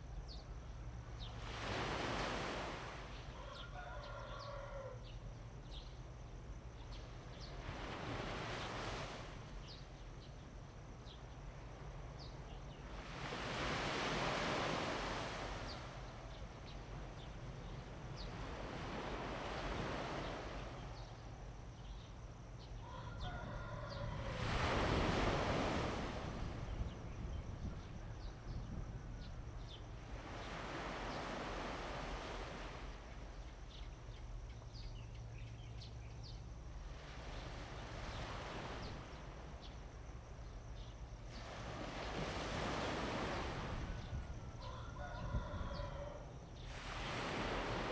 楓港海提, 屏東縣枋山鄉 - In the morning
In the morning next to the fishing port, Chicken crowing, Bird cry, Sound of the waves, Traffic sound
Zoom H2n MS+XY